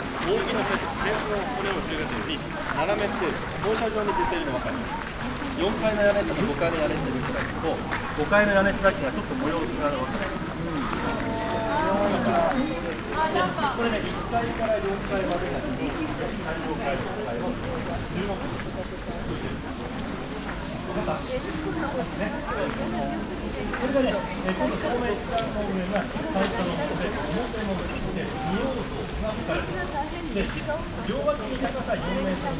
nikkō, tōshō-gu shrine, walkway - nikkō, tōshō-gushrine, walkway
on the walkway to the famous traditional nikkō tōshō-gū shrine, build 1636 - footsteps on the stoney uphill path, two guides explaining the location to japanese visitors
international city scapes and topographic field recordings
20 August